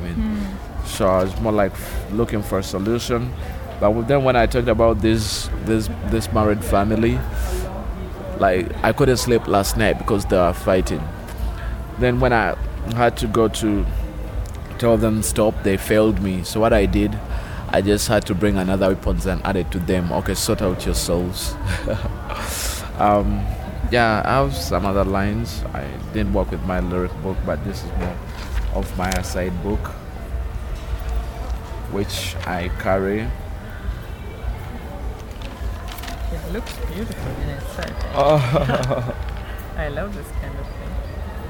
Uganda National Cultural Centre, Kampala, Uganda - Burney MC - Why Hate…?
…we are sitting with Burney in front of the Uganda National Cultural Centre. Some events are going on, music, and many voices in the air… Burney MC has his sketch-book of lyrics with him and recites some of his verse to me … like this one from last night…
As an artist, Burney grew up in the Bavubuka All Stars Foundation and belongs to a group of artists called Abatuuze.